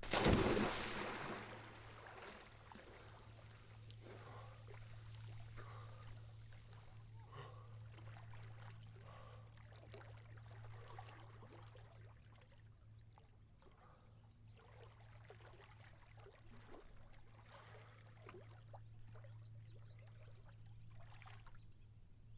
Nötö - Evening swim on Nötö
Going for a swim on the 21st of June 2021 just after 7pm just below the windmill on Nötö, in the Finnish archipelago.
Lounais-Suomen aluehallintovirasto, Manner-Suomi, Suomi / Finland, 21 June 2021